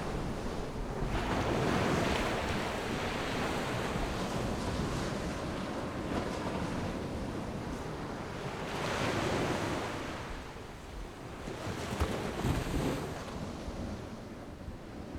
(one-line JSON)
{"title": "嵵裡沙灘, Magong City - At the beach", "date": "2014-10-23 13:29:00", "description": "At the beach, Windy, Sound of the waves\nZoom H6+Rode NT4", "latitude": "23.53", "longitude": "119.57", "altitude": "6", "timezone": "Asia/Taipei"}